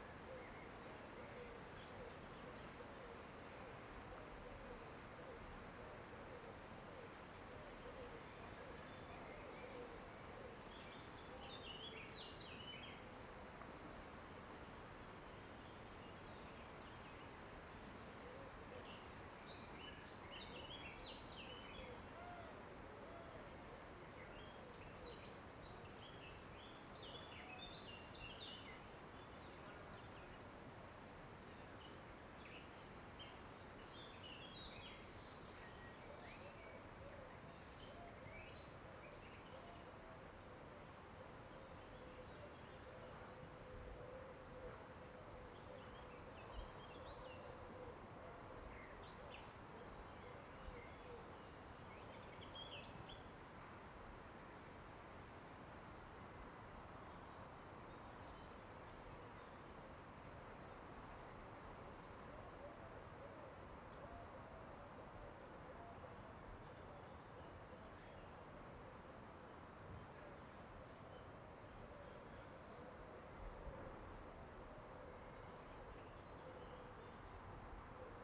Rue Brûlée, Goussainville, France - Eglise de Goussainville, cloches-Covid19-YC
Au vieux village de Goussainville, pendant le Covid19 le trafic aerien presque a l arret, ambiance pres du cimetiere et cloches de l 'eglise. Une rare ambiance de Goussainville sans avion ..